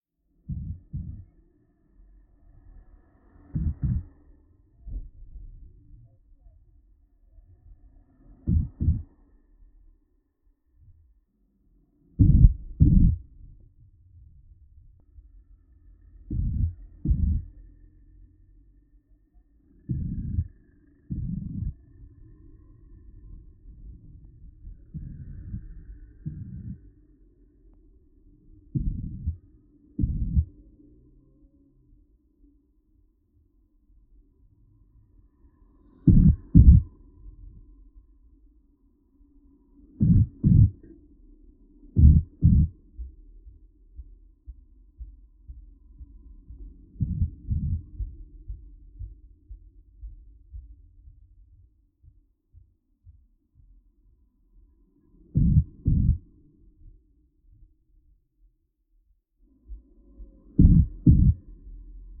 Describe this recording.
Contact microphone recording of a rubber speed bump, commonly called as "laying policeman" in Lithuania. Cars going over it cause a low rhythmic sound. Almost no other sounds can be heard, since rubber is not a very resonant material.